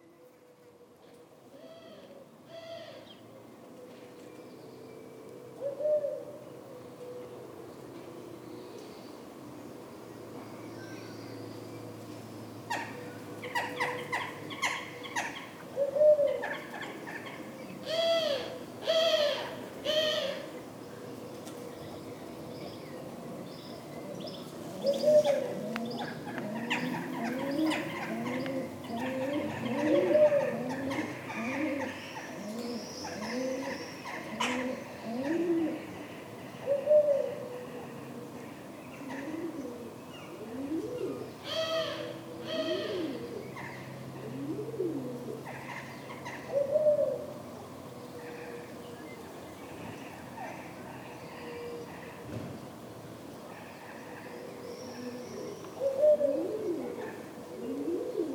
Saint-Martin-de-Ré, France - The very peaceful mood of a french village during a sunday morning
In the center of Saint-Martin-De-Ré, near the church.
From 0:00 mn to 2:30 mn, strictly nothing is happening and it's so peaceful (and also important to record it, even if there's nothing).
2:30 mn : bells are ringing nine.
After this, birds are excited. You can hear : Jackdaws, Common Wood Pigeons, European Turtle Doves, Common Swifts.
5:20 mn : bells are ringing again.
Beautiful and so so quiet.